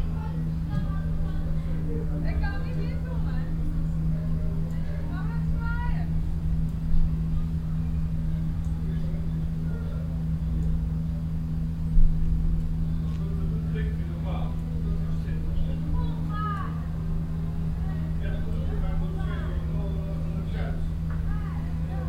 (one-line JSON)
{"title": "vianden, chairlift, valley station", "date": "2011-08-09 21:39:00", "description": "At the valley station of the chairlift. The sound of the drum corp from the castle reflecting in the valley, some music from a radio of the chairlift guards and the constant hum of the chairlift motor generator.\nVianden, Sessellift, Talstation\nAn der Talstation des Sessellifts. Das Geräusch von den Trommlern vom Schloss hallt im Tal wider, Musik aus einem Radio des Sesselliftwärters und das konstante Brummen des Sessellift-Motors.\nVianden, télésiège\nÀ la station inférieure du télésiège. Le son des joueurs de tambour qui se répercute dans la vallée depuis le château, la musique de la radio des agents du télésiège et le bourdonnement du générateur du moteur du télésiège.\nProject - Klangraum Our - topographic field recordings, sound objects and social ambiences", "latitude": "49.94", "longitude": "6.21", "altitude": "210", "timezone": "Europe/Luxembourg"}